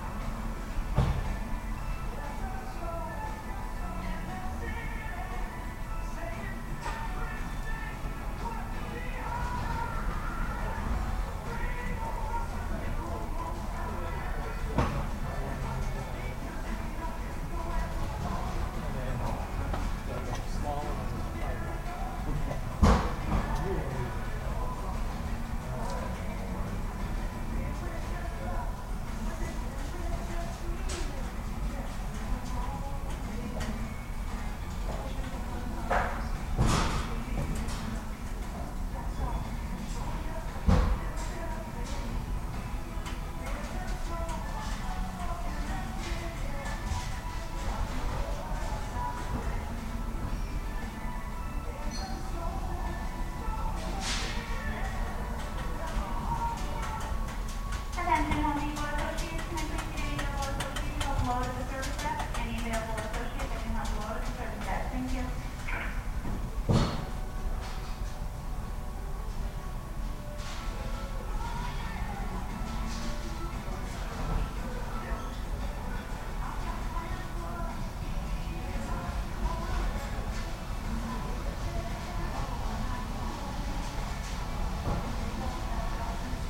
{"title": "Crossing Cir., Traverse City, MI, USA - Traverse City Home Depot", "date": "2016-04-11 14:37:00", "description": "Forklifts, pop hits and announcements on a Monday afternoon, Stereo mic (Audio-Technica, AT-822), recorded via Sony MD (MZ-NF810, pre-amp) and Tascam DR-60DmkII.", "latitude": "44.72", "longitude": "-85.63", "altitude": "220", "timezone": "America/Detroit"}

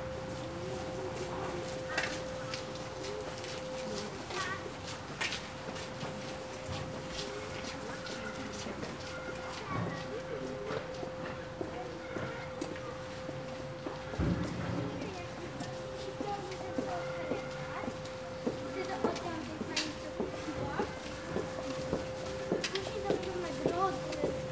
{"title": "Poznan, Jan III Sobieski housing estate, tin services center - office cleaning", "date": "2014-03-01 13:40:00", "description": "two cleaners working in an office - vacuuming, moving things around, knocking over pieces of furniture. nice reverb of conversations and steps in the alcove of a tin building. dog barks echoing of the nearby apartment buildings, a few older man talking on a bench a few meters away, people walking, taking care of their Saturday morning errands.", "latitude": "52.46", "longitude": "16.91", "altitude": "96", "timezone": "Europe/Warsaw"}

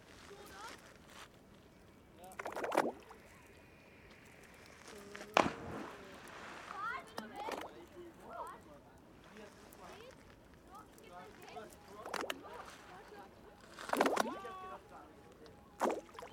{"title": "Flughfeld Aspern swimmers and stones, Vienna", "date": "2011-08-14 16:05:00", "description": "artificial lake at the new development site at the former Flughfeld Aspern", "latitude": "48.23", "longitude": "16.51", "timezone": "Europe/Vienna"}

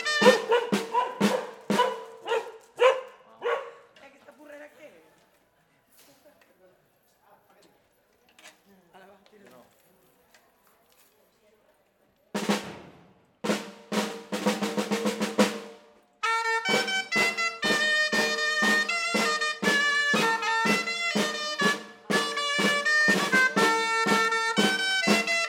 {"title": "Carrer Santíssima Trinitat, Tàrbena, Alicante, Espagne - Tàrbena - Province d'Alicante - Espagne XXII sème fête gastronomique et Artisanal de Tàrbena - Inauguration de la 2nd Journée", "date": "2022-07-17 10:15:00", "description": "Tàrbena - Province d'Alicante - Espagne\nXXII sème fête gastronomique et Artisanal de Tàrbena\nInauguration de la 2nd Journée\nLes 2 jeunes musiciens parcourent les rues de la ville\nAmbiance 2\nZOOM H6", "latitude": "38.69", "longitude": "-0.10", "altitude": "570", "timezone": "Europe/Madrid"}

